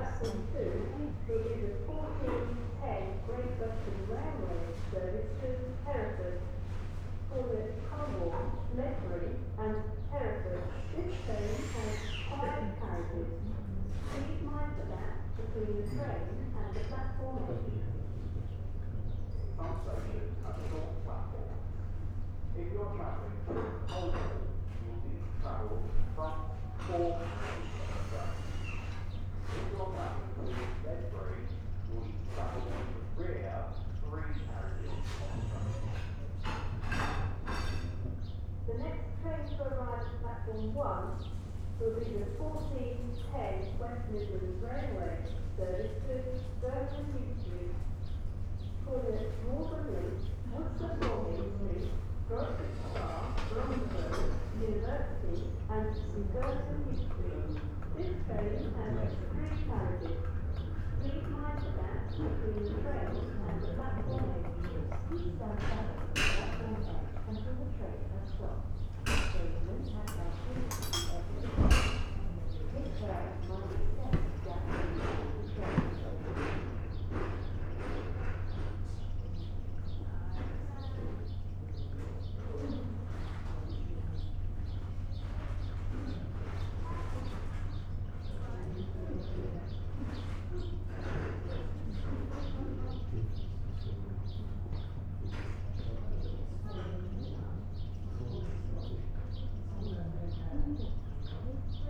Long ambient in a quiet station.
Recorded as I slowly wander around the station on a quiet day. Workmen are refurbishing the old victorian canopy over the platform. A few people talk. 2 trains arrive and leave.
MixPre 6 II with 2 Sennheiser MKH 8020s
Worcestershire, England, United Kingdom, 8 June 2022